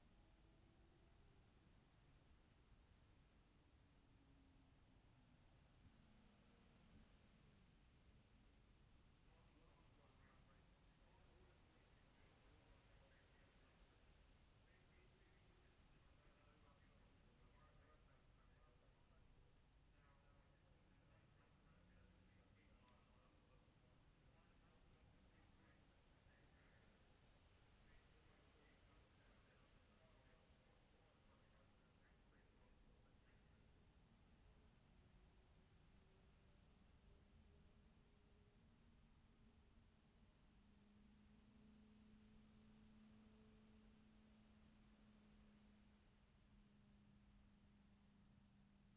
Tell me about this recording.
Gold Cup 2020 ... 600 evens practice ... Memorial Out ... dpa 4060s to Zoom H5 clipped to bag ...